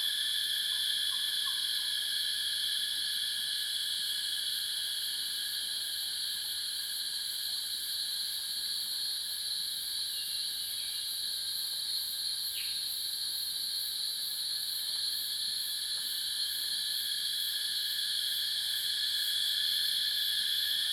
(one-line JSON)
{"title": "華龍巷, 五城村Nantou County - Cicadas and Bird sounds", "date": "2016-06-08 06:24:00", "description": "Cicadas cry, Bird sounds\nZoom H2n MS+XY", "latitude": "23.92", "longitude": "120.88", "altitude": "726", "timezone": "Asia/Taipei"}